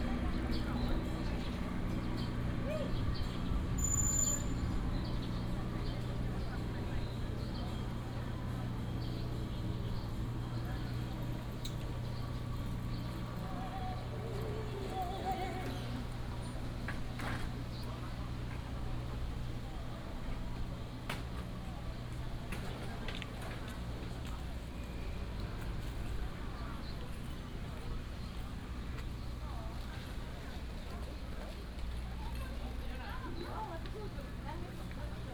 Dalongdong Baoan Temple, Taipei City - temple fair
Walking in the temple, Traffic sound, sound of birds
10 April 2017, 15:54